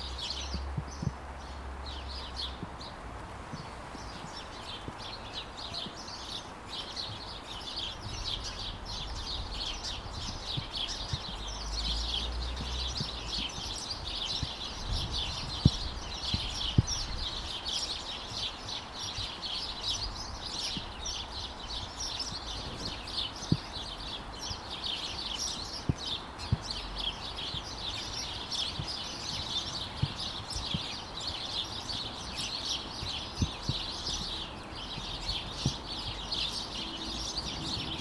Swaythling, Southampton, UK - 029 Starlings
29 January 2017